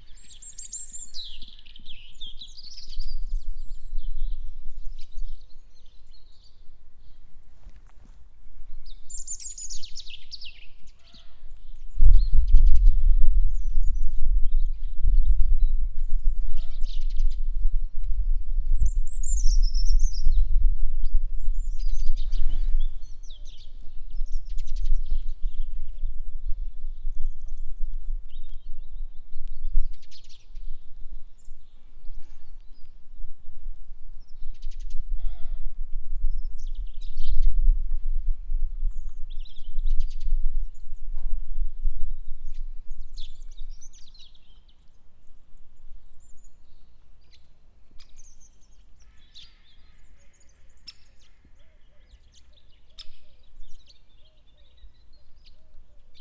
Villa, Sedico BL, Italia - bird song
A morning walk with bird singing.
1 November, 08:30